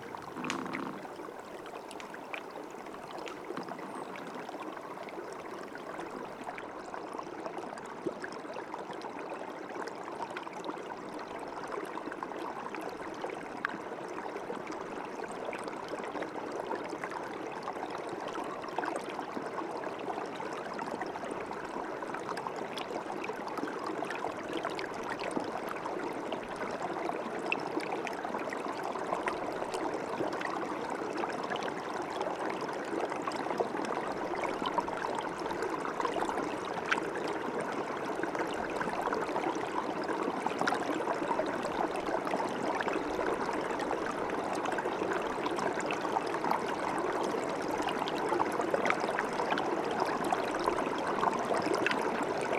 everything is frozen: cracking, moaning trees in wind, little river in the valley